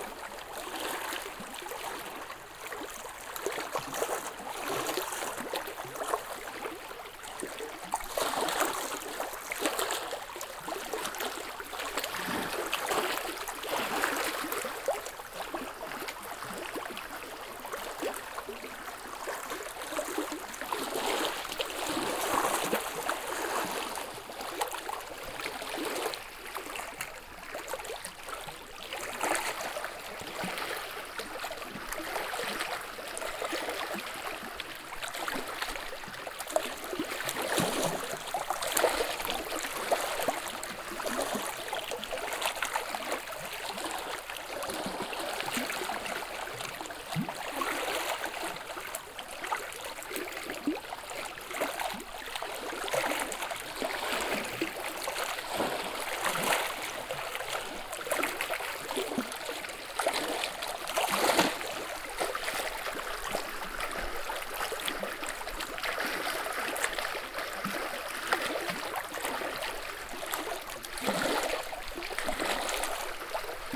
when i arrived in Sinazongwe in June, water levels of the lake were still very high... also the soundscapes at the lake were very different from what i had experienced in August 2016...
Southern Province, Zambia